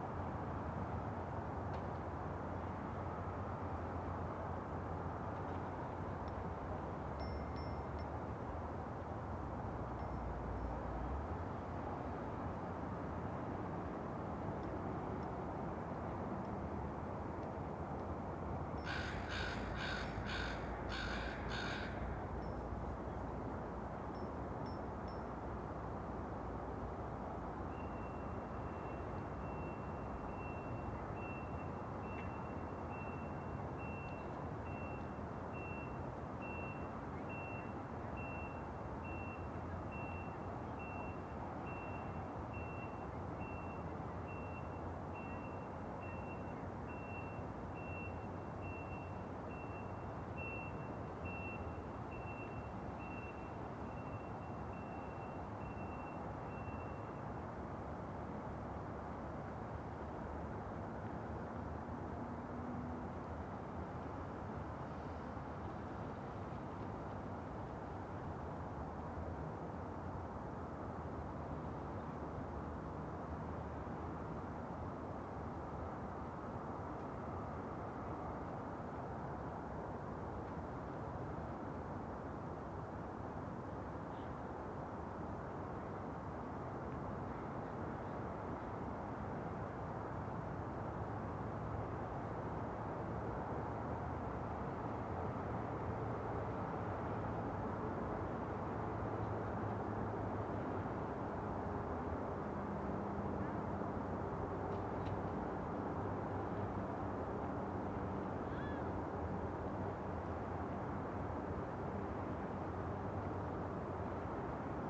Greenlake, Seattle - Greenlake in Winter
Greenlake, in the center of north Seattle, is very popular with joggers, bicyclists, roller bladers, skate boarders and dog walkers in summertime, but in the dead of winter it's almost perfectly still. Only the stoutest venture out in sub-freezing weather like this. I'm not one of them: I quit recording after 38 minutes.
Major elements:
* Mallards, seagulls, crows and one bald eagle wearing a stocking cap
* A few hearty joggers
* A Park Dept. employee (he had to be there)
* Small planes and larger jets on approach to SeaTac
* The everpresent rumble of Highway 99